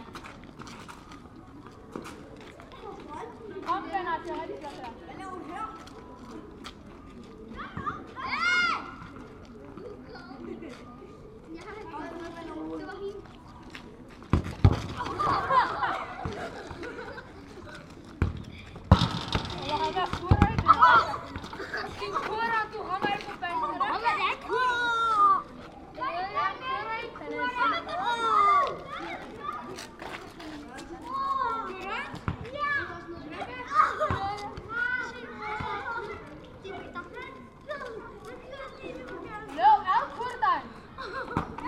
København, Denmark - Children playing football
Into a small street corner, some children playing football. They are happy and make a lot of noise !